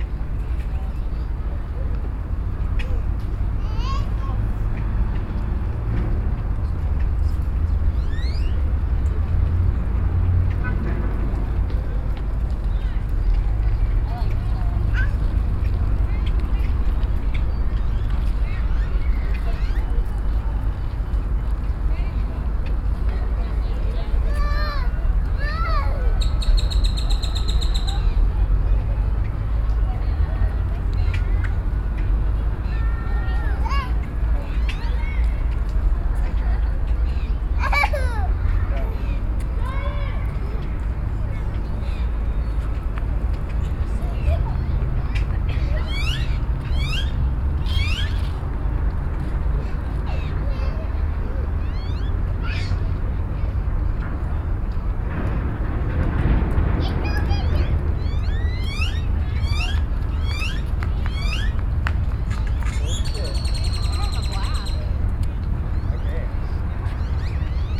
Austin, Texas State Capitol, Park
USA, Austin, Texas, Capitol, Birds, Children, binaural
11 November 2011, 15:52